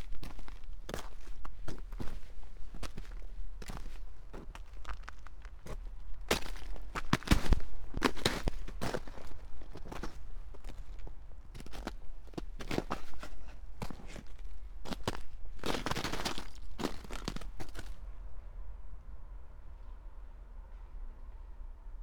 sonopoetic path - broken maple tree